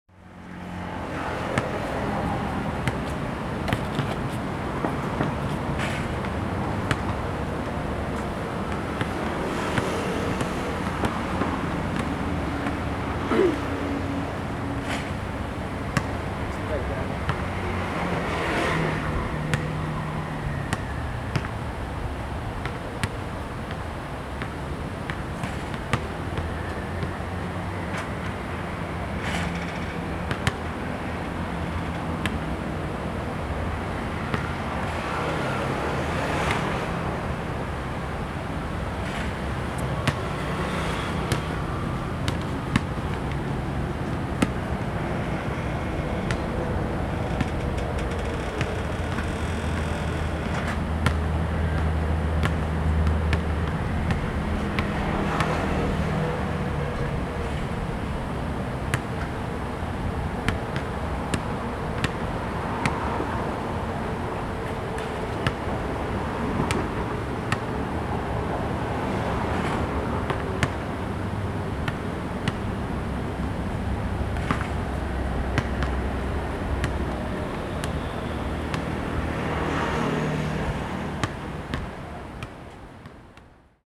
Small basketball court, Traffic Sound
Sony Hi-MD MZ-RH1 +Sony ECM-MS907
New Taipei City, Taiwan